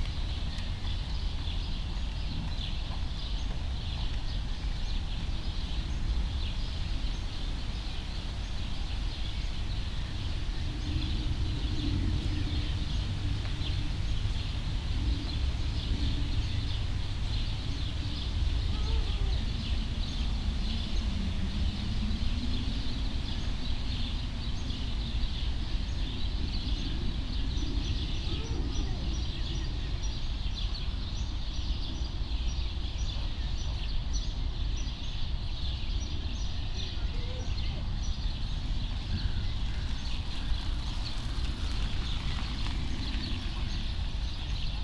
Tiergarten, Berlin, Germany - caged owls
not so near to the cage with several owls, but close enough to hear sad voices of caged birds